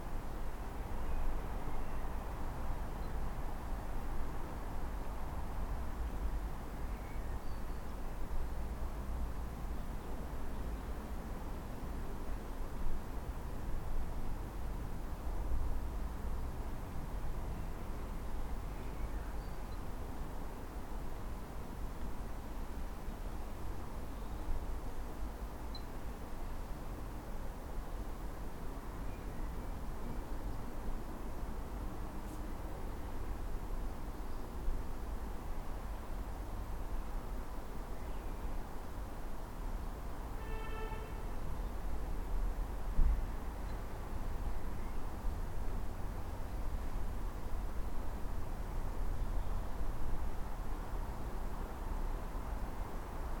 This recording was made in our apple tree. After a long period of ill-health, I am feeling much better, and am able to do lots more in the garden. This has led to enthusiastic planning and creativity out there, including the acquisition of three lovely chickens who now live where the ducks (may they rest in peace) formerly resided. The chickens are beauties, and the abundance of food for them has attracted many wild avian buddies to the garden too, for whom I have been creating little seed bars out of suet, nuts, mealworms and other treats. Tits - in particular little Blue-tits - and the Robins and Wrens all totally love the suet treats and so yesterday I strapped my EDIROL R09 into the branches of the tree to record their little flittings and chirps. I'm sure one of the sounds is of a Blue-tit but if any of you know differently, please help me to better understand the tiny comrades who share our garden with us.